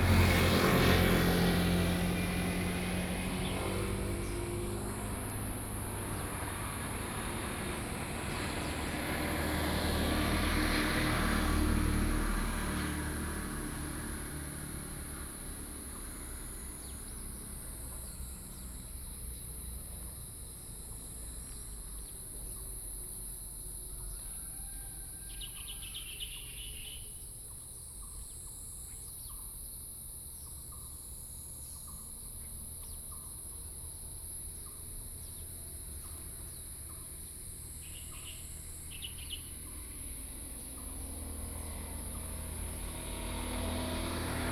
Xiaopingding, Tamsui Dist., New Taipei City - Insect sounds
In the morning, Birds singing, Insect sounds, traffic sound, Binaural recordings, Sony PCM D50 + Soundman OKM II